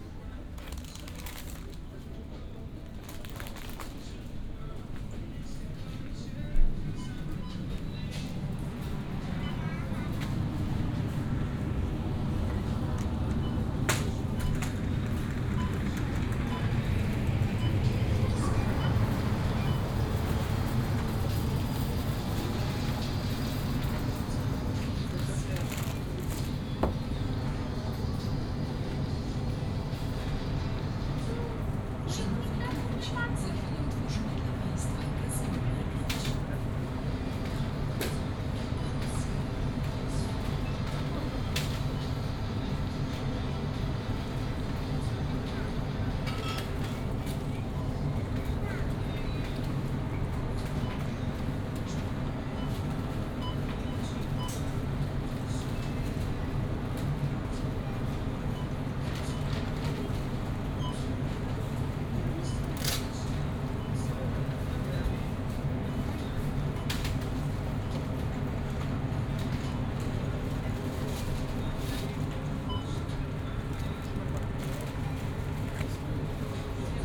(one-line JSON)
{"title": "Lidl store, Szymanowskiego, Poznan - shopping", "date": "2018-09-01 18:00:00", "description": "(binaural rec, please use headphones) shopping at lidl store. entire visit at the store from the entrance to the cash registers (roland r-07 + luhd pm-01 bins)", "latitude": "52.46", "longitude": "16.91", "altitude": "100", "timezone": "GMT+1"}